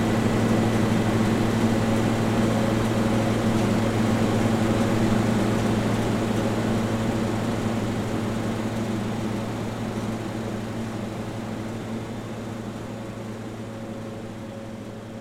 An old air conditioning unit, recorded with ZOOM H5.